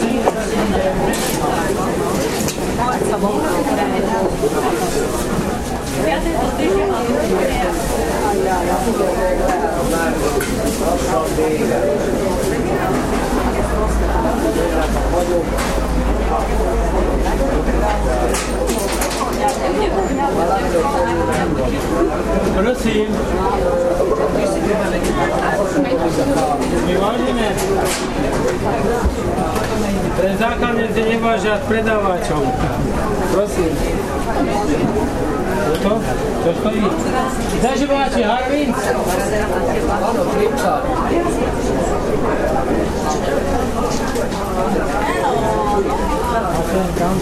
bratislava, market at zilinska street - market atmosphere VII